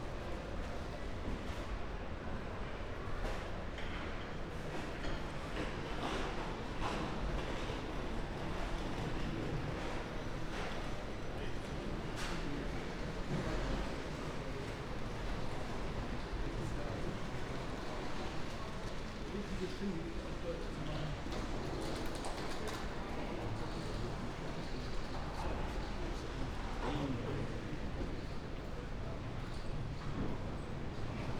Mannheim Hauptbahnhof, Deutschland - main station walking
ideling at Mannheim Haupbahnhof, waiting for a connecting train to Salzburg, strolling around shopiing areas, pedestrian underpass and so on
(Sony PCM D50, Primo EM172)